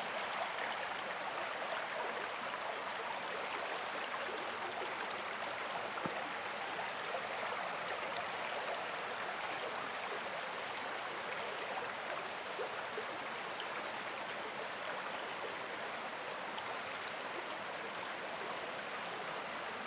Walking along the river in the dark, following the direction of the river, the sound flows from left to right and the volume rises and falls.
Recorded with a zoom H4n's internal mics.
West Yorkshire, UK, 18 July